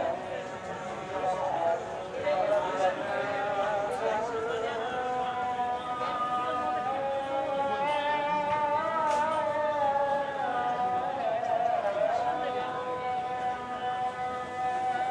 Call to Prayer heard from the market.
(Recorded w/ AT BP4025 on SD 633)